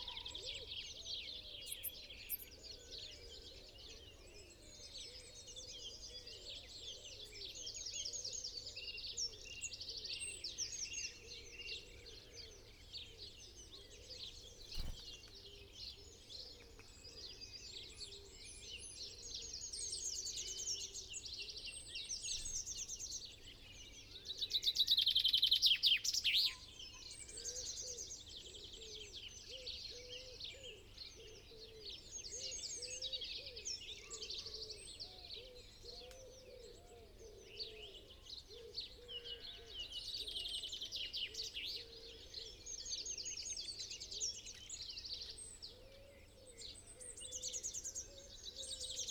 dawn chorus ... in a bush ... dpa 4060s to Zoom H5 ... mics clipped to twigs ... brd song ... calls from ... tree sparrow ... wren ... chiffchaff ... chaffinch ... great tit ... pheasant ... blackbird ... song thrush ... wood pigeon ... collared dove ... dunnock ... goldfinch ... starling ... crow ... jackdaw ... some traffic ... quiet skies ...
Malton, UK, 1 May 2020, ~05:00